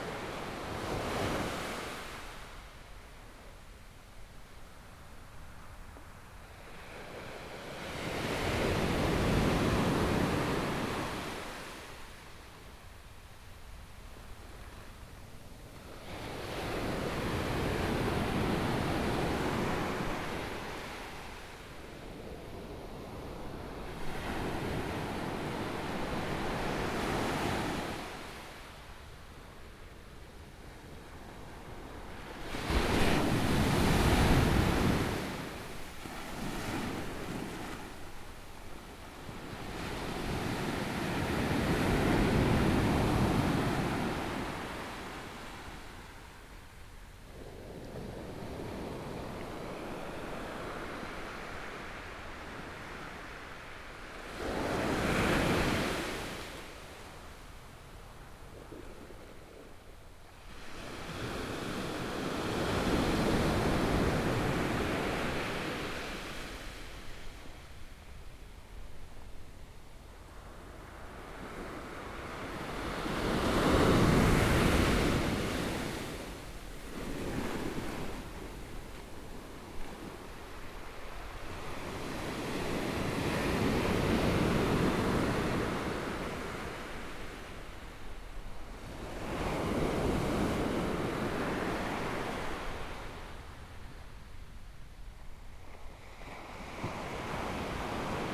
Beibin Park, Hualien City - Sound of the waves
Sound of the waves
Binaural recordings
Zoom H4n+ Soundman OKM II + Rode NT4
Hualien County, Hualian City, 花蓮北濱外環道